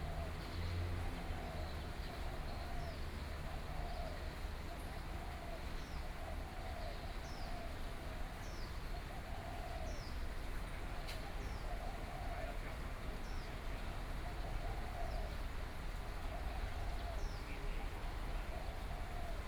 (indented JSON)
{
  "title": "NongAn Park, Taipei City - Morning in the park",
  "date": "2014-02-27 06:51:00",
  "description": "Morning in the park, Traffic Sound, Birds singing\nBinaural recordings",
  "latitude": "25.07",
  "longitude": "121.54",
  "timezone": "Asia/Taipei"
}